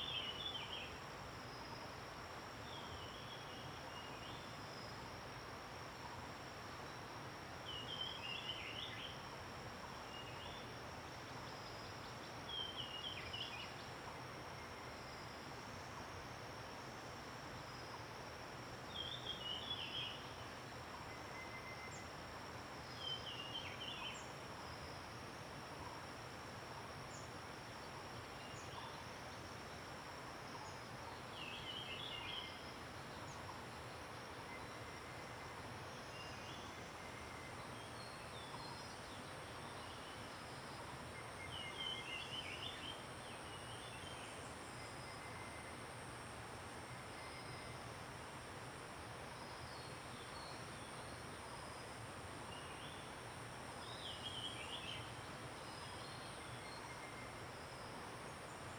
種瓜坑, 埔里鎮桃米里 - In the woods
Birds singing, In the woods, Sound streams
Zoom H2n MS+XY